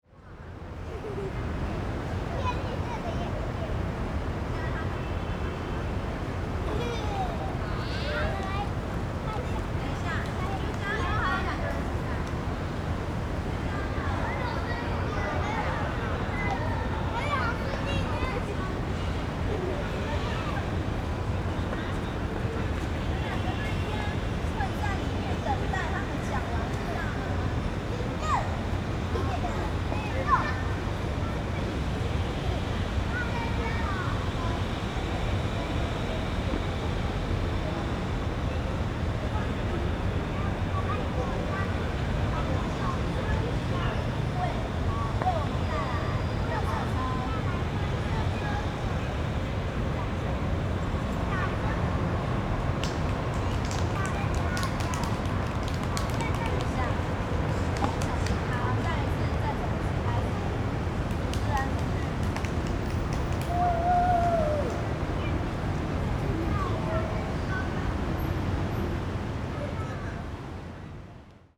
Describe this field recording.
A group of school children in the park, traffic sound, Zoom H4n + Rode NT4